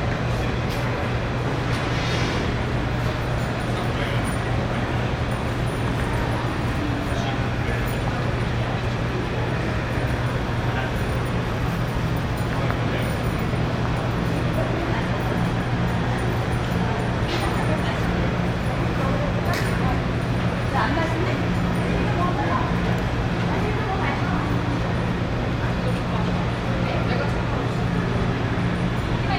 very 1980s style mall with shops and community organizations
Alberta, Canada